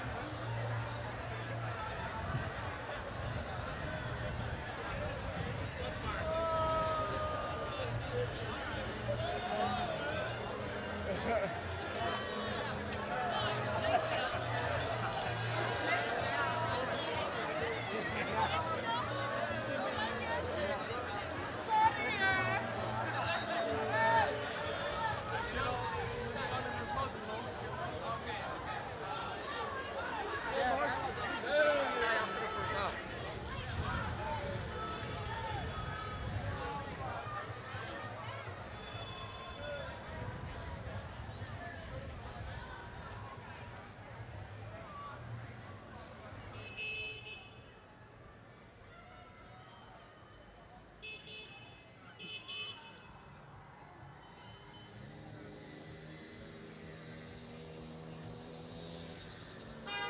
Kortenbos, Centrum, Nederland - Soccer party downtown The Hague
A small impression of people celebrating in downtown The Hague because the Dutch defeated the Brazilians (WC2010) on July 2nd 2010.
Zoom H2 recorder with SP-TFB-2 binaural microphones.
The Hague Center, The Netherlands